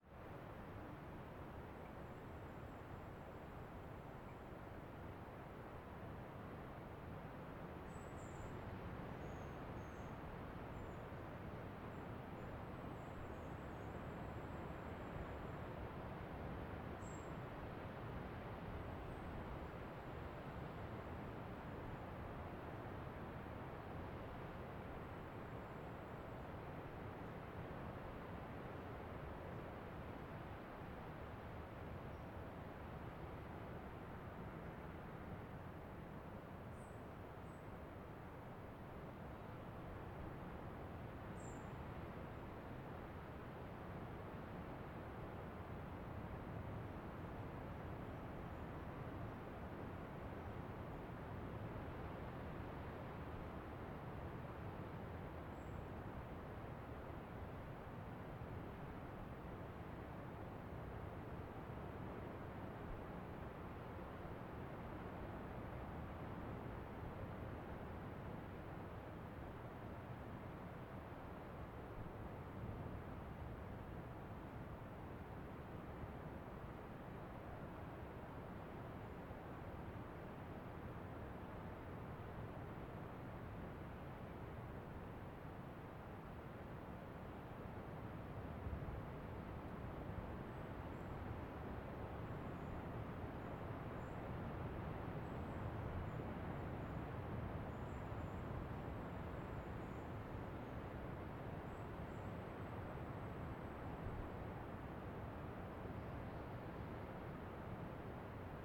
Valdivia, Chili - LCQA AMB PUNTA CURIÑANCO EL OLIVILLO MORNING BIRDS OCEAN BREEZE MS MKH MATRICED
This is a recording of a forest 'El Olivillo' in the Área costera protegida Punta Curiñanco. I used Sennheiser MS microphones (MKH8050 MKH30) and a Sound Devices 633.